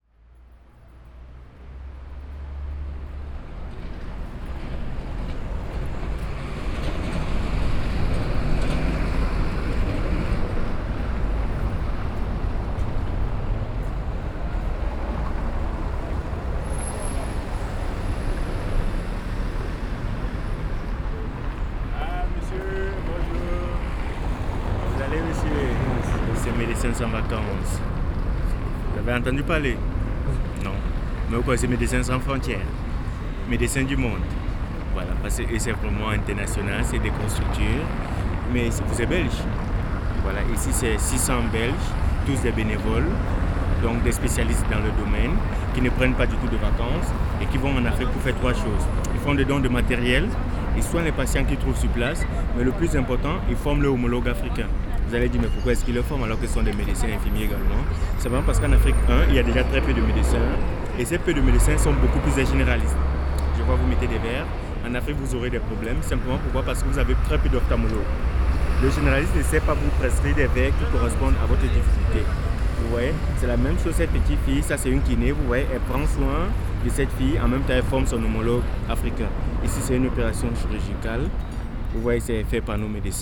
Brussels, Rue Haute, people gathering money for Medecins sans vacances
Bruxelles, rue Haute, récolte de fonds pour Médecins sans vacances.
Brussels, Belgium, March 2011